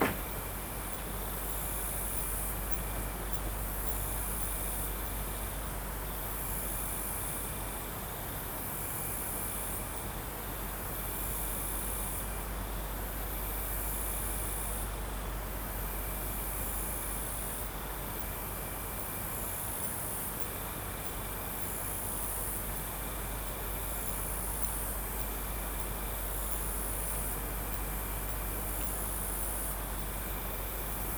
Recorded on a Sound Devices MixPre-3 via an Audio-Technica BP4025